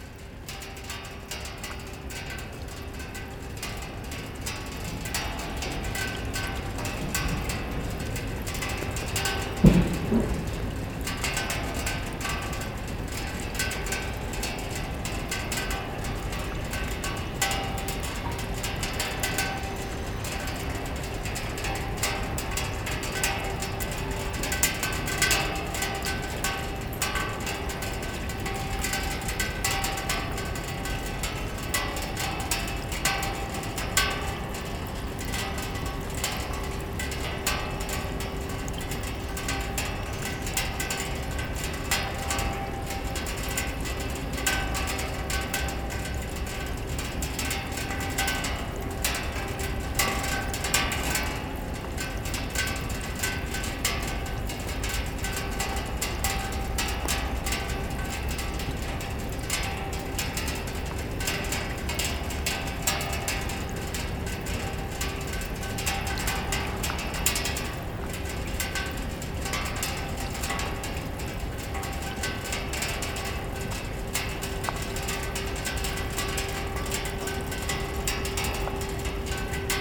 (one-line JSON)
{"title": "La Rochelle, France - Its raining", "date": "2018-05-26 09:20:00", "description": "A constant rain is falling on La Rochelle this morning. Drops make percussive sounds on a metallic bench.", "latitude": "46.15", "longitude": "-1.17", "timezone": "Europe/Paris"}